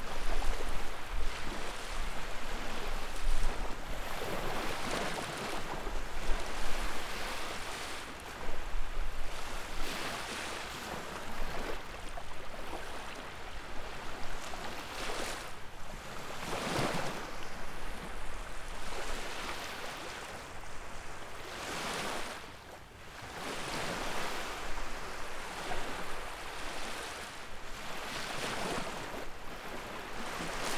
North Avenue Beach, Chicago - North Avenue Beach, waves